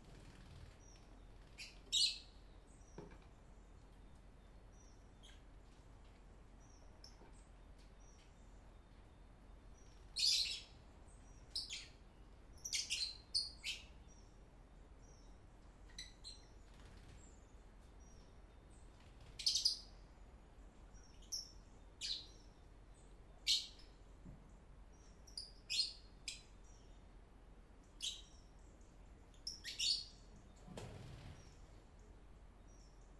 dans le jardin pres dune volliére
Le Pradet, France